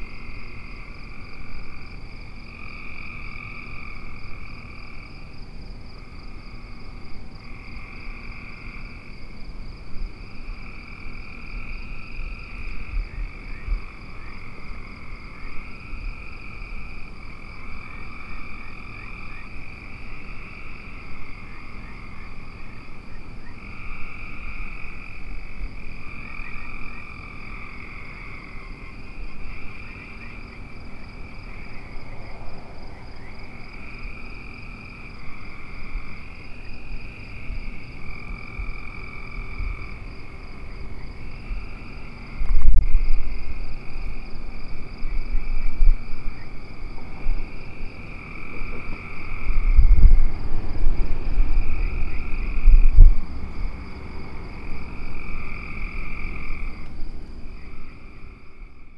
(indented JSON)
{
  "title": "Freedom, MD, USA - The Sunken Hum Broadcast 150 - Watching Lightning Bugs and Listening to Crickets - 30 May 2013",
  "date": "2013-05-29 22:00:00",
  "description": "The sounds on the backproch of my brother's house in Maryland.",
  "latitude": "39.38",
  "longitude": "-76.93",
  "altitude": "146",
  "timezone": "America/New_York"
}